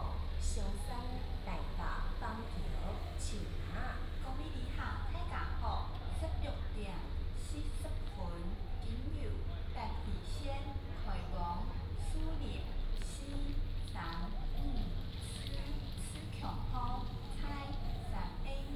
Taitung Station, Taitung County - In the square outside the station
In the square outside the station
Taitung County, Taiwan, 31 October 2014, 16:28